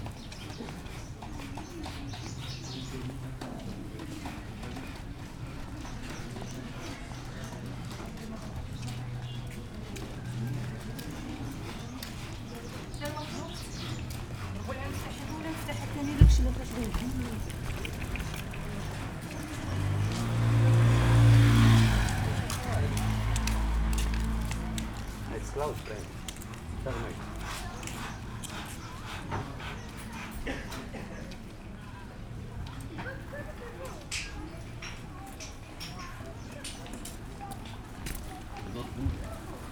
R.Sidi Abdelaziz, Marrakesch, Marokko - street ambience
sitting at a corner in Rue Sidi Abdelaziz, listening to the street activity
(Sony D50, DPA4060)